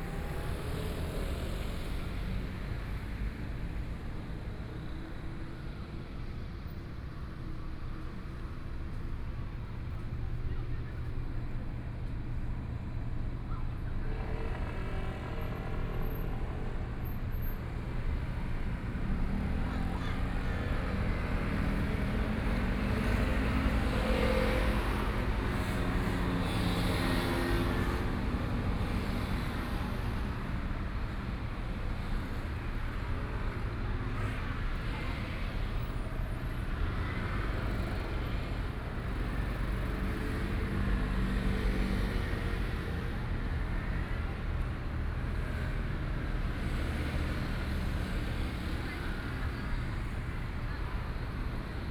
Zhonghua Rd., Taitung - Intersection
Traffic Sound, Binaural recordings, Zoom H4n+ Soundman OKM II
Taitung County, Taiwan, January 2014